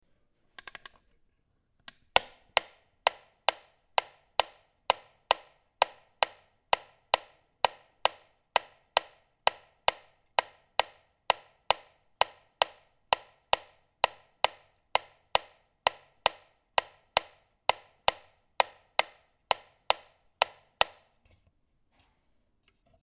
bonifazius, bürknerstr. - metronom
17.12.2008 20:20, altes ungenaues metronom / old imprecise metronome
17 December 2008, 20:20